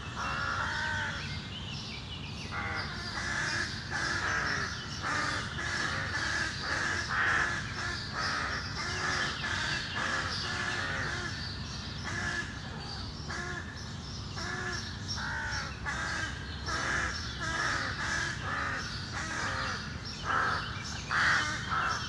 Bd Robert Barrier, Aix-les-Bains, France - Corbotière
Beaucoup d'animation dans une corbeautière maintenant disparue suite à la construction d'immeubles.
France métropolitaine, France